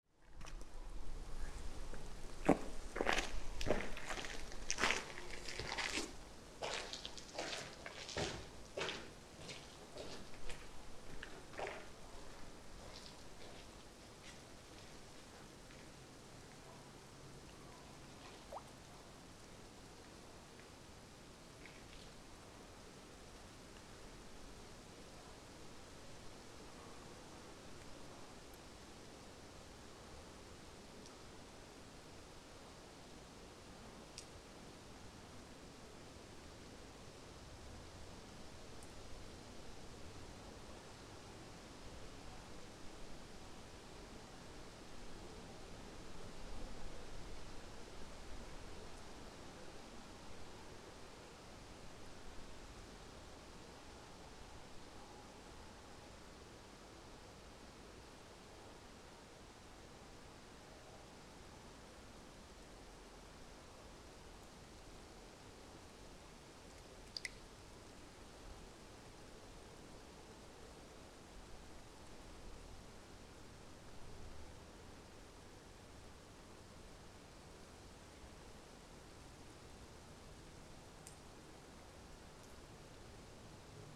Lithuania, Vyzuonos, under the bridge
distant folliage, some drops of water, silences and car above
Utena, Lithuania